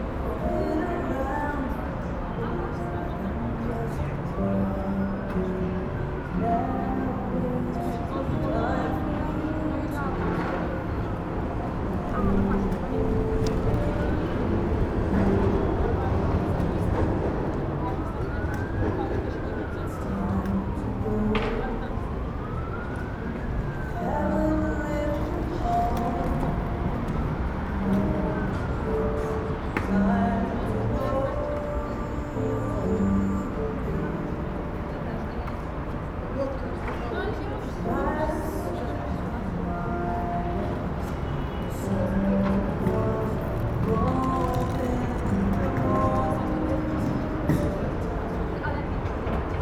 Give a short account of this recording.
relatively quiet space among new Baltik building, a hotel and a Concordia Design building. There are a few restaurants there, coffee place, a few benches to sit down. Skaters toss their skateboards, music from restaurants, a girl swinging by at her scooter, plastic ziplock bag crackling in the wind near the recorder, traffic noise from a circle crossing nearby. (roland r-07)